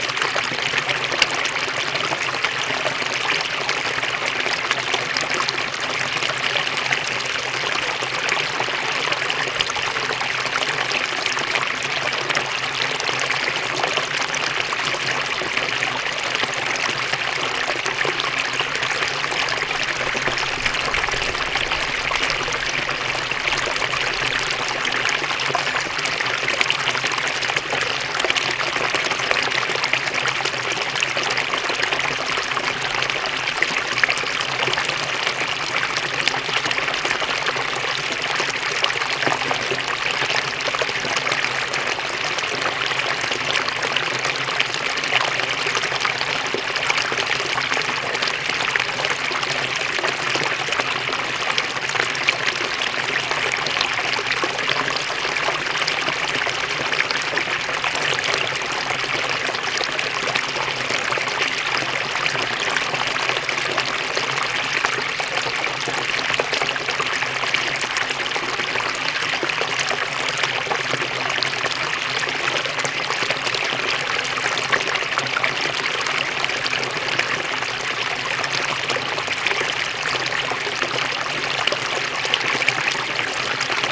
La Source, fontaine bassin artificiel
Rue Alain Fournier, Fontaine dans bassin artificiel à Orléans - La Source (45 - France)
> En construction sur la carte...
Orléans, France, May 2011